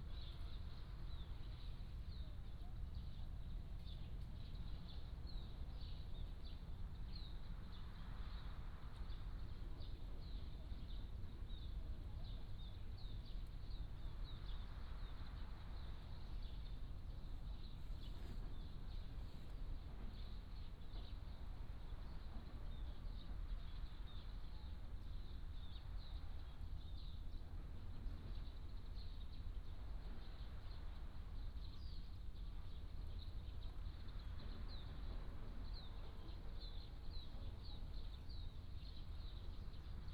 林投村, Huxi Township - In the woods
In the woods, Sound of the waves